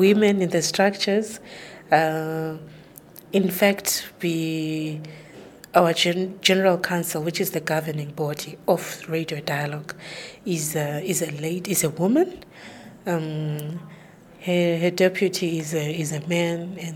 floor Pioneer House, Bulawayo, Zimbabwe - inside the studios of Radio Dialogue
Sharon Sithole, working in the advocacy office of Radio Dialogue, tells how the women participate in the community radio’s activities locally.
29 October, 09:20